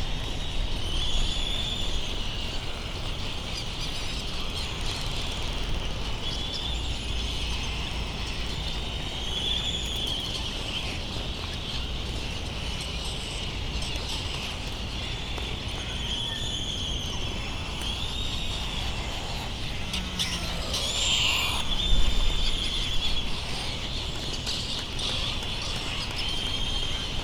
United States - Midway Atoll soundscape ...
Soundscape ... Sand Island ... Midway Atoll ... bird calls from laysan albatross ... bonin petrels ... white terns ... black noddy ... wind thru iron wood trees ... darkness has fallen and bonin petrels arrive in their thousands ... open lavalier mics on mini tripod ...